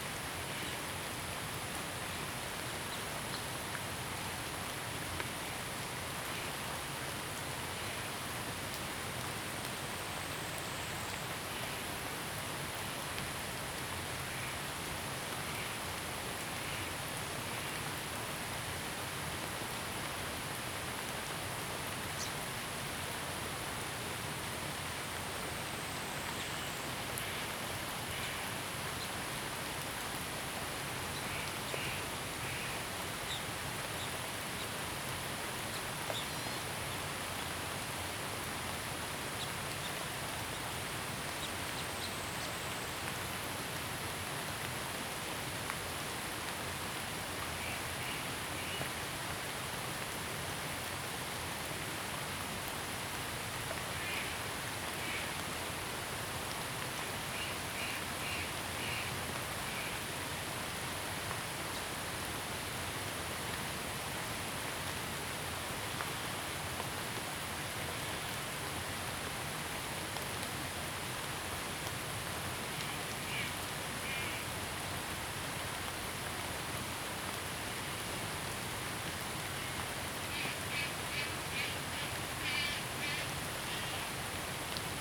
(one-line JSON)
{"title": "茅埔坑溼地, 南投縣埔里鎮桃米里 - Rainy Day", "date": "2015-08-11 16:09:00", "description": "Bird calls, Rainy Day\nZoom H2n MS+XY", "latitude": "23.94", "longitude": "120.94", "altitude": "470", "timezone": "Asia/Taipei"}